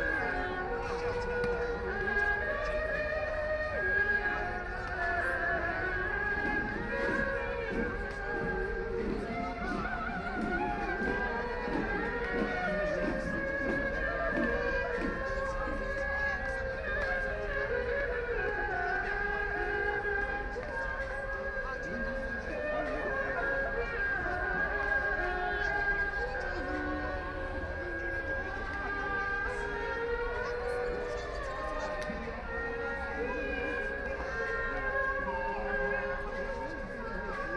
Heping Park, Shanghai - walking in the park
Various performances in the park, shǒu gǔ, Binaural recording, Zoom H6+ Soundman OKM II